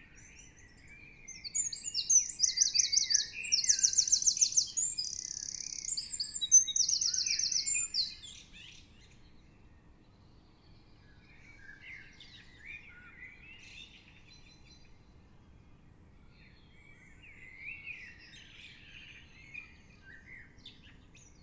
The birds were singing so loudly I couldn't sleep, so I decided to record them instead, by suspending a pair of Naiant X-X microphones out of the window.